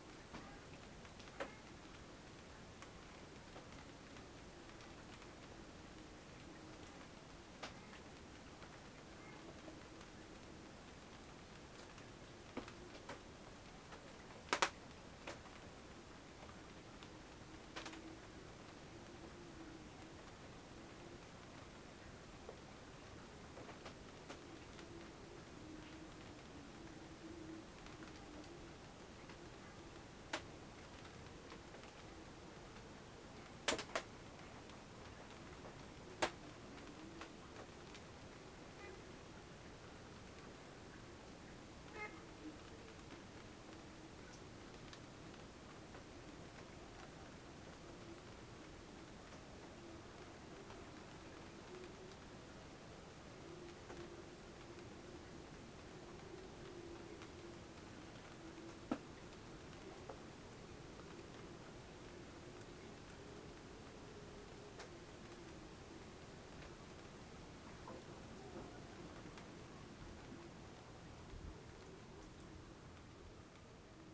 thunderstorm in the distance, rain hits the tarp and stops
the city, the country & me: june 29, 2011